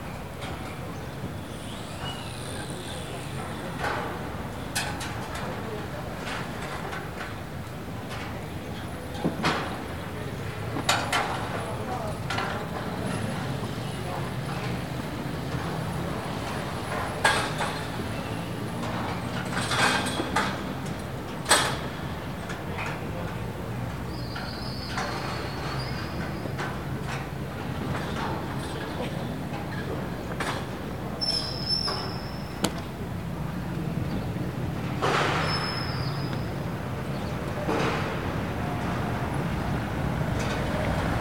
Place du Capitole, Toulouse, France - Work in Progress
Work in Progress, Bird, trafic car, Metallic Sound
captation Zoom H4n4